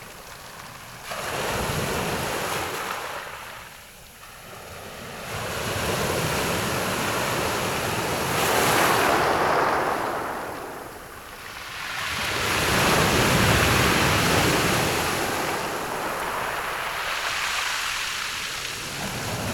內埤灣, Su'ao Township - sound of the waves
Sound of the waves, At the beach
Zoom H6 MS+ Rode NT4
28 July 2014, 15:20